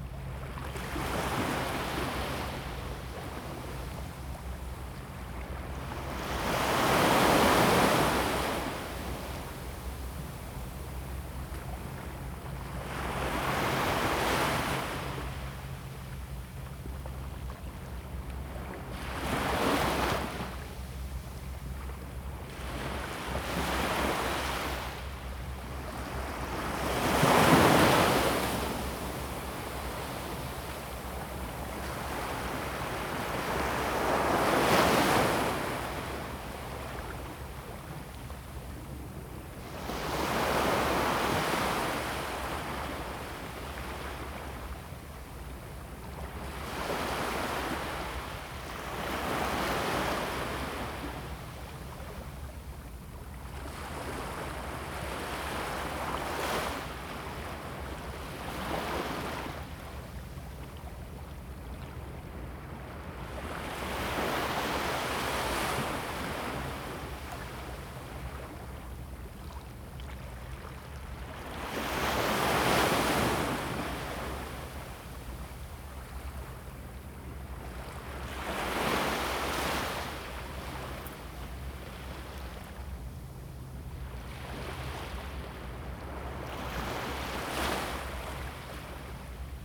{"title": "Gangzui, Linyuan Dist., Kaohsiung City - the waves", "date": "2016-11-22 11:47:00", "description": "Sound of the waves, Beach\nZoom H2n MS+XY", "latitude": "22.49", "longitude": "120.38", "altitude": "6", "timezone": "Asia/Taipei"}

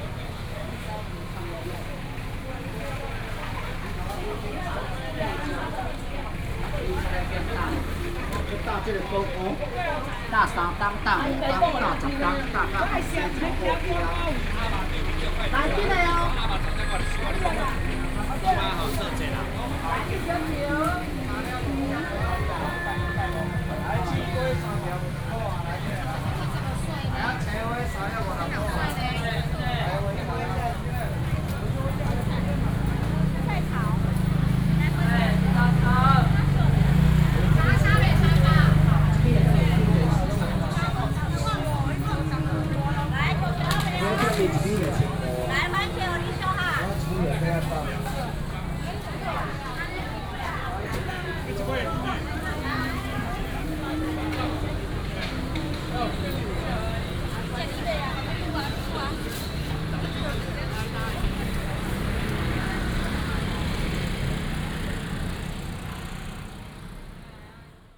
{"title": "成功路市場, Fengshan Dist., Kaohsiung City - Walking through the traditional market", "date": "2018-03-30 10:22:00", "description": "Walking through the traditional market, traffic sound", "latitude": "22.62", "longitude": "120.36", "altitude": "14", "timezone": "Asia/Taipei"}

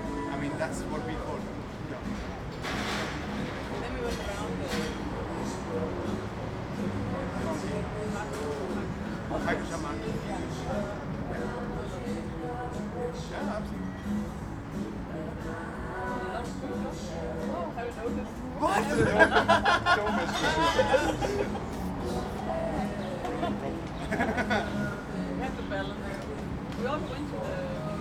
{"title": "planufer, pub - early evening, outside", "date": "2009-08-22 18:30:00", "description": "early evening, warm summer day, having a cold drink after an extended recording session along the former berlin wall, at a nice pub close to the landwehrkanal.", "latitude": "52.50", "longitude": "13.42", "altitude": "40", "timezone": "Europe/Berlin"}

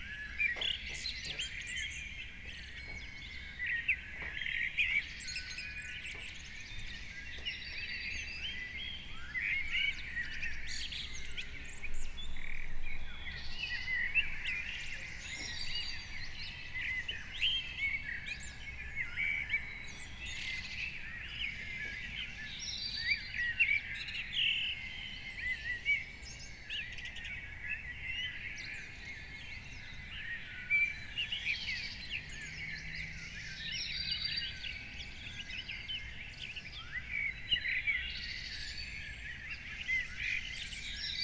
{"title": "Niedertiefenbach - morning bird chorus", "date": "2018-06-30 04:30:00", "description": "Beselich Niedertiefenbach, early morning bird chorus heard at the open window\n(Sony PCM D50", "latitude": "50.44", "longitude": "8.14", "altitude": "208", "timezone": "Europe/Berlin"}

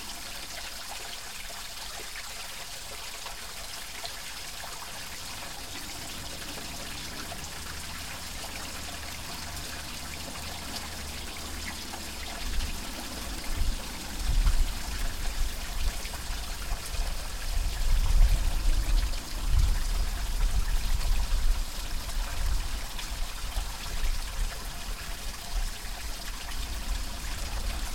{"title": "Stalos, Crete, at greek water distribution system", "date": "2019-05-08 09:50:00", "description": "pipes with pipes and more pipes - greek water distribution system in the field", "latitude": "35.51", "longitude": "23.95", "altitude": "22", "timezone": "Europe/Athens"}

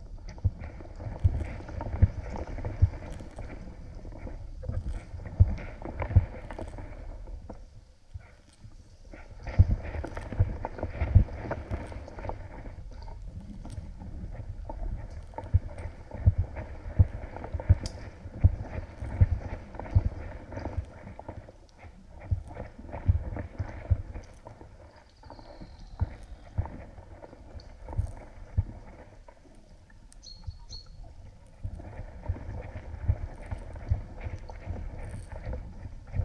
{"title": "Aukštaitija National Park, Lithuania, bottle in water - bottle in water", "date": "2012-04-29 15:15:00", "description": "recording for ongoing Debris Ecology project: contact microphone on the found object - a bottle in water", "latitude": "55.36", "longitude": "26.00", "altitude": "147", "timezone": "Europe/Vilnius"}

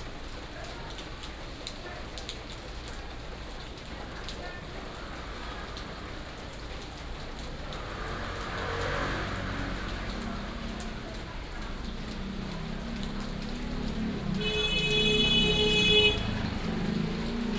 {"title": "Xin 3rd Rd., Zhongzheng Dist., Keelung City - Rainy day", "date": "2017-11-21 11:27:00", "description": "Old Quarter, Rainy day, Traffic sound, Binaural recordings, Sony PCM D100+ Soundman OKM II", "latitude": "25.13", "longitude": "121.75", "altitude": "16", "timezone": "Asia/Taipei"}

